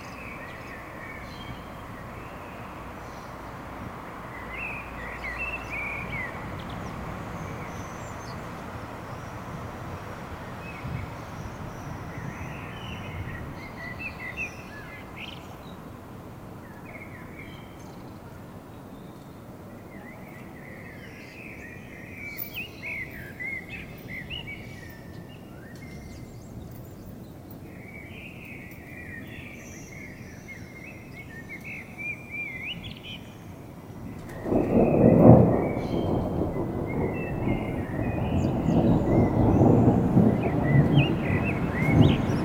koeln, beginning thunderstorm
recorded june 22nd, 2008, around 10 p. m.
project: "hasenbrot - a private sound diary"
Cologne, Germany